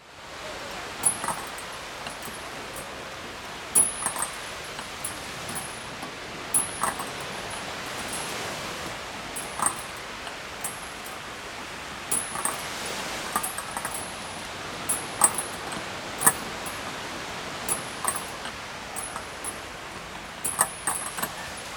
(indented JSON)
{
  "title": "Pirita Beach Tallinn, swings and sea noise",
  "date": "2010-05-21 16:45:00",
  "description": "recording from the Sonic Surveys of Tallinn workshop, May 2010",
  "latitude": "59.48",
  "longitude": "24.84",
  "altitude": "9",
  "timezone": "Europe/Tallinn"
}